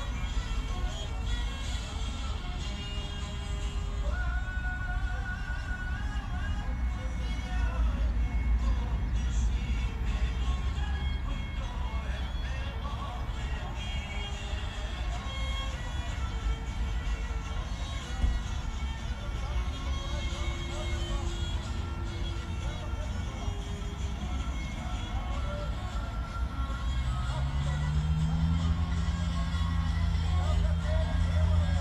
Maribor, Sokolska station - music in backyard
at Sokolska station, drone of heavy traffic, distorted balkan sounds from a backyard garden, where agroup of people gathered around a little shack.
(SD702 DPA4060)